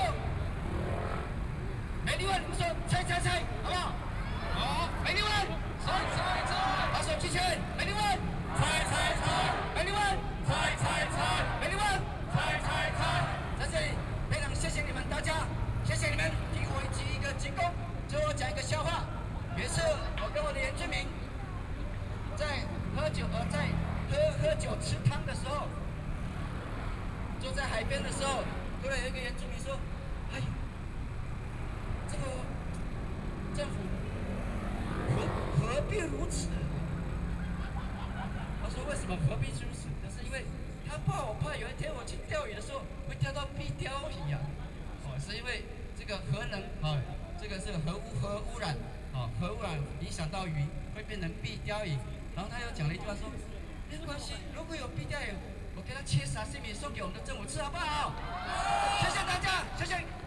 Chiang Kai-Shek Memorial Hall, Taipei - Antinuclear
Citizen groups around Taiwan are speech, Traffic Sound, Binaural recordings, Zoom H6+ Soundman OKM II
Zhongzheng District, Taipei City, Taiwan, 27 December